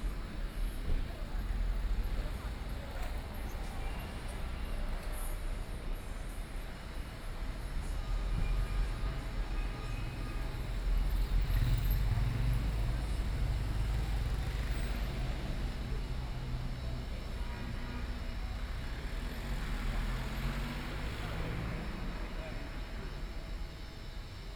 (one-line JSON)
{"title": "Fenyang Road, Shanghai - walking in the Street", "date": "2013-12-03 14:49:00", "description": "Follow the footsteps, Walking on the street, Traffic Sound, Binaural recording, Zoom H6+ Soundman OKM II", "latitude": "31.21", "longitude": "121.45", "altitude": "17", "timezone": "Asia/Shanghai"}